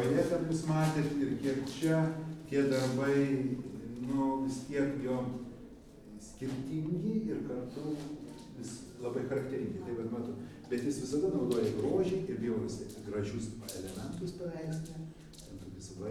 Lithuania, Dusetos, in the art gallery
A. Stauskas speaks about artist S. Sauka